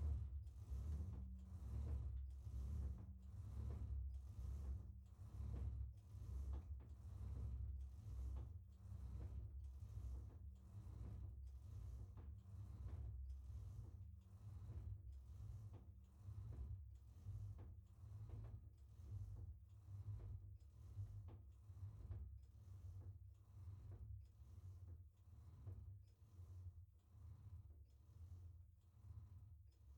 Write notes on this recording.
Prépotin (Parc Naturel Régional du Perche), église - L'Angélus